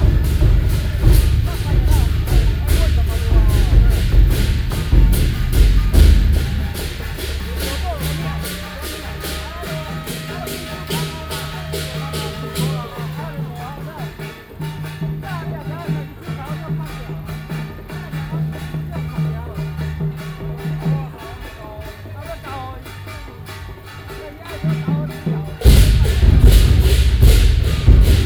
Firework, Traditional temple festivals, Gong, Traditional musical instruments, Binaural recordings, ( Sound and Taiwan - Taiwan SoundMap project / SoundMap20121115-26 )